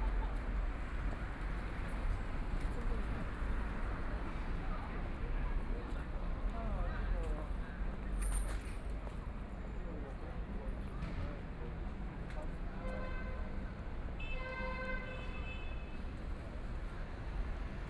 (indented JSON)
{
  "title": "上海浦東新區陸家嘴金融貿易區 - in the street",
  "date": "2013-11-21 12:19:00",
  "description": "Noon time, in the Street, Walking through a variety of shops, Construction Sound, Traffic Sound, Binaural recording, Zoom H6+ Soundman OKM II",
  "latitude": "31.23",
  "longitude": "121.51",
  "altitude": "15",
  "timezone": "Asia/Shanghai"
}